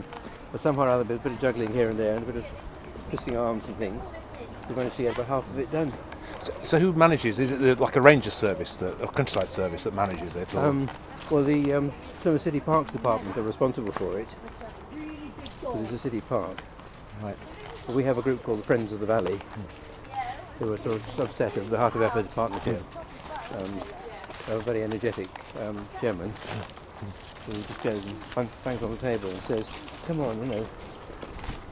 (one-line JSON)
{"title": "Walk Three: Friends of Efford Valley", "date": "2010-10-04 16:08:00", "latitude": "50.39", "longitude": "-4.10", "altitude": "69", "timezone": "Europe/Berlin"}